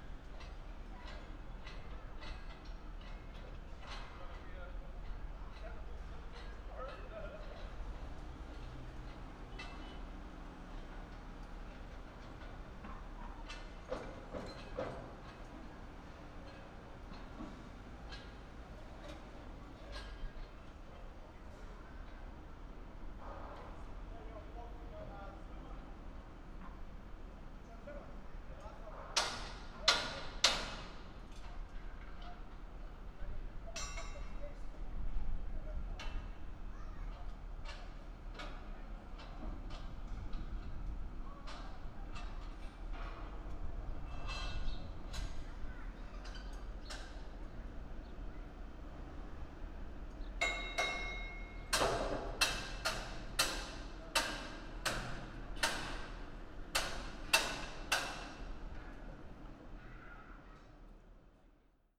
Wynyard Quarter, Auckland, New Zealand - Hammering metal
Riggers erecting scaffold for construction of Wynyard Quarter apartments.
PCM-D50 w on-board mics.